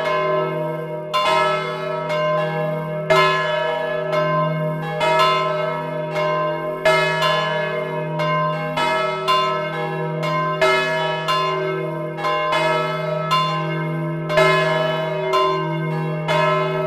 Court-St.-Étienne, Belgique - Les cloches
Recording of the Court-St-Etienne bells, inside the bell tower.
Court-St.-Étienne, Belgium, July 2014